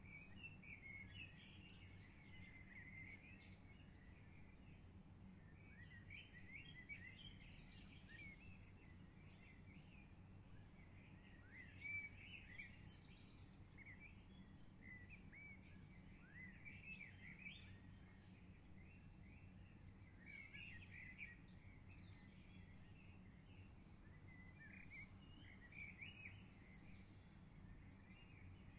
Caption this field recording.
Early Morning singing Birds on a camping ground in Lazise, Italy.